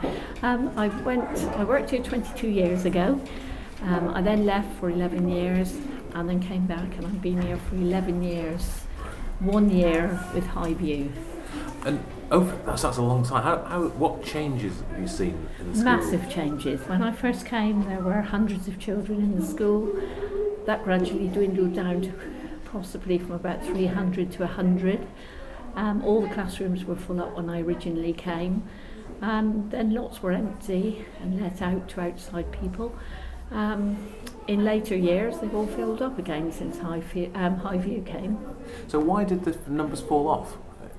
Interview with Heather, school TA
21 March 2011, 13:33